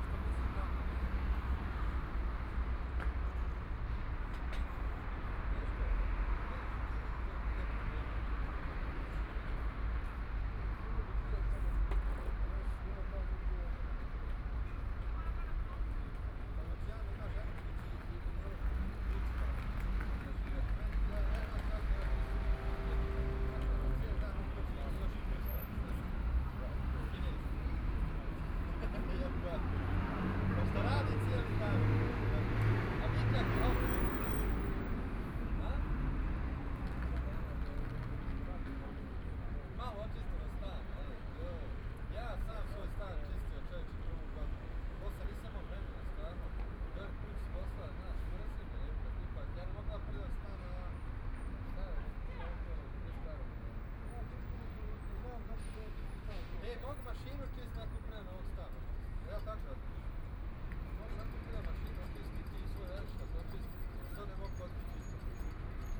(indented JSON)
{
  "title": "the Bund, Shanghai - the Bund",
  "date": "2013-11-30 12:31:00",
  "description": "Walk along the street from the coffee shop inside, Sitting on the street, Traffic Sound, Walking through the streets of many tourists, Bells, Ship's whistle, Binaural recording, Zoom H6+ Soundman OKM II",
  "latitude": "31.24",
  "longitude": "121.49",
  "altitude": "13",
  "timezone": "Asia/Shanghai"
}